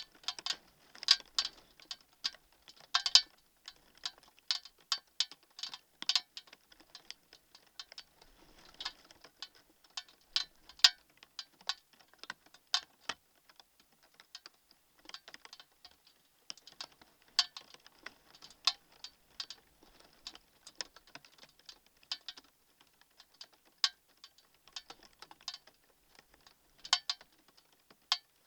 Lithuania, Nolenai, found object: empty beer can
contact microphone on empty beer can..rain is starting